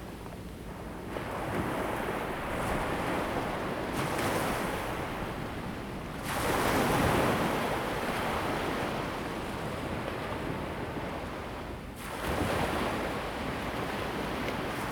車城鄉福安路, Checheng Township - Close to the wave
Sound of the waves, Close to the wave
Zoom H2n MS+XY